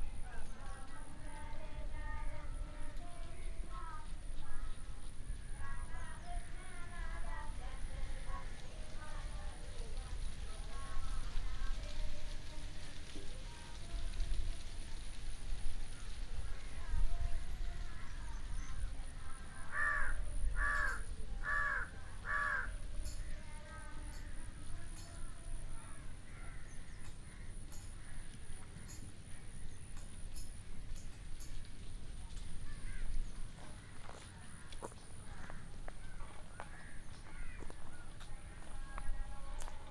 anoops island - karela, india - anoops island